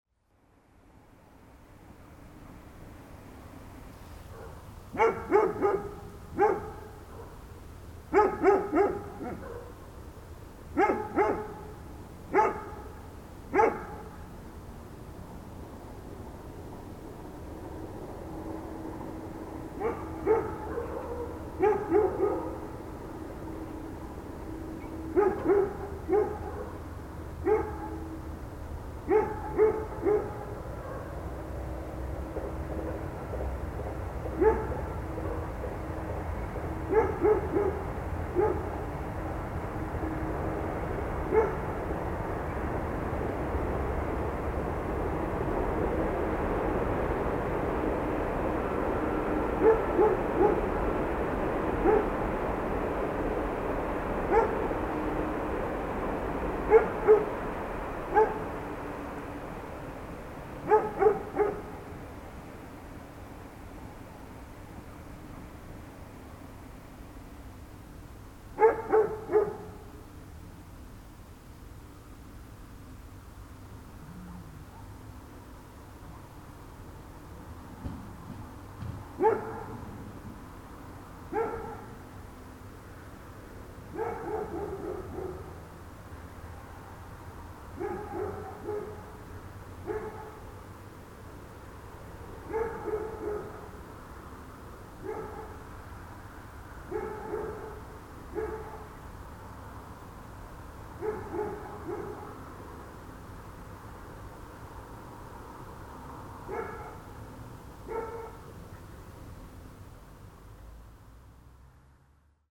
Maintenon, France - Dog barking
A dog is barking because of boredom.